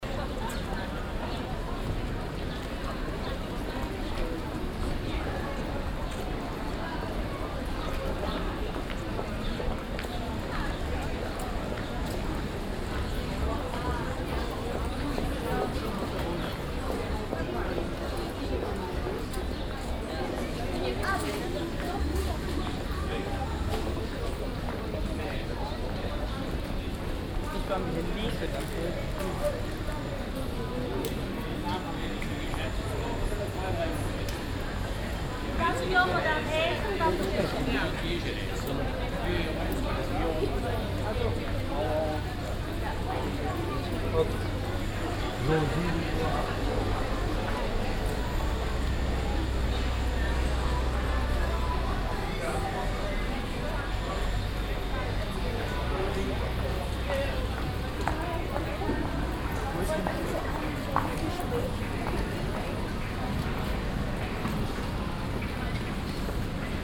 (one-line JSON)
{"title": "cologne, hohe strasse, mittags", "date": "2008-07-08 16:21:00", "description": "shopping atmosphäre mittags auf der einkaufsmeile hohe strasse, schritte, stimmen, boutiquenmusiken\nsoundmap nrw: social ambiences/ listen to the people - in & outdoor nearfield recordings, listen to the people", "latitude": "50.94", "longitude": "6.96", "altitude": "67", "timezone": "Europe/Berlin"}